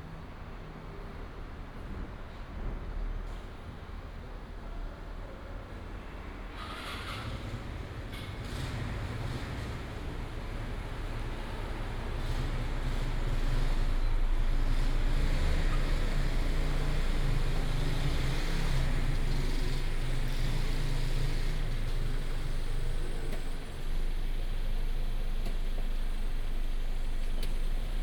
新城新豐宮, Baoshan Township - Small village
In the square of the temple, Small village, Chicken cry, Dog sounds, Bird call, Traffic sound, Binaural recordings, Sony PCM D100+ Soundman OKM II
Baoshan Township, Hsinchu County, Taiwan, 15 September